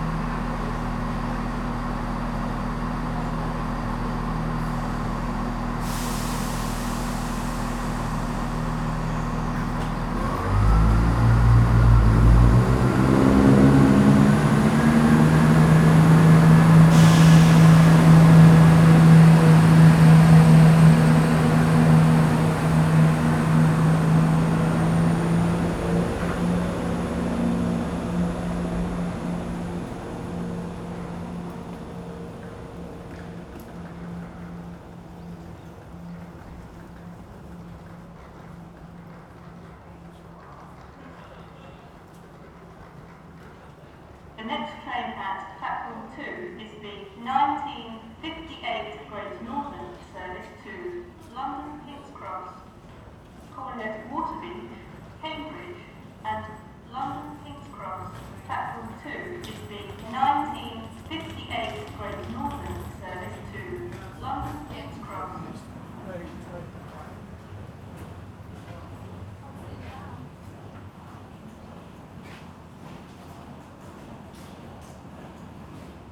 Ely, Station Rd, Cambridgeshire, Ely, UK - Diesel train stopped and departing
Diesel train stopped in train station and departing. Sounds from arriving travellers and public announcement
Train diesel arrêté en gare, puis re-démarrant. Annonce et bruits de voyageurs arrivant en gare.